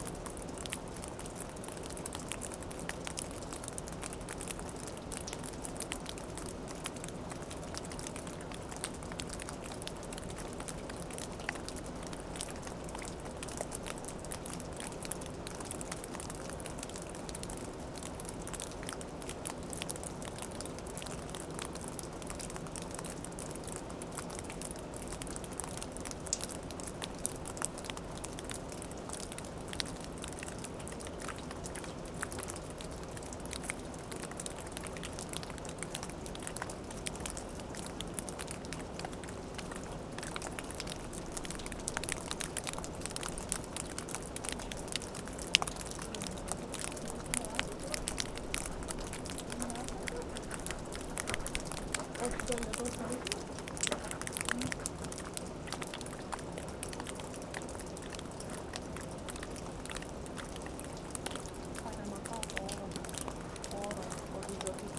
Lithuania, Ginuciai, melting snow
snow melts on the roof of old watermill
13 March 2011